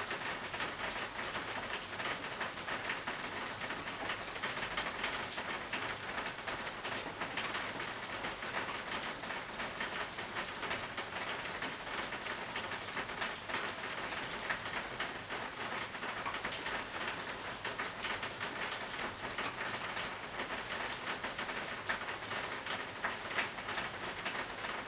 Rainy Day, Early morning, Frogs sound, at the Hostel, Sound of insects
Zoom H2n MS+XY
Nantou County, Puli Township, 桃米巷11-3號, 30 April 2015, 04:40